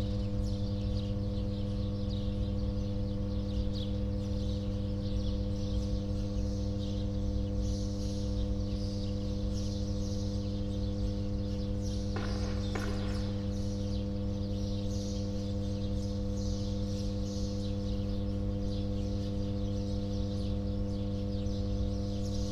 This terminal station is located a few hundred metres away from Qalet Marku Bay, where the submarine cable was pulled ashore in December 2013. At the Terminal Station, electricity from the submarine cable will be received at 220kV and stepped down to 132kV. It is then fed to the Maltese grid via cables passing through a purposely-built 6.5 kilometres tunnel leading to the Kappara Distribution Centre. The cables connecting the Terminal Station to the Distribution Centre comprise three circuits, each with three single core cables in trefoil formation. At the other end of the terminal, the Interconnector cable heads towards Sicily through an 850 metre underground culvert until it reaches Qalet Marku Bay, at Bahar ic-Caghaq.
Some shooting is going on nearby.
(SD702, DPA4060)